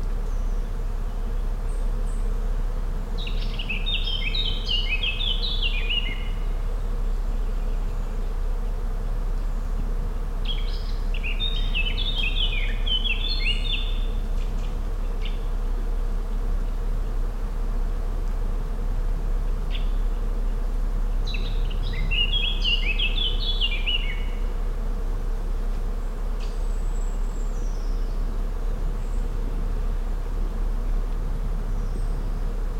Crnomelj, Slovenija - along Lahinja river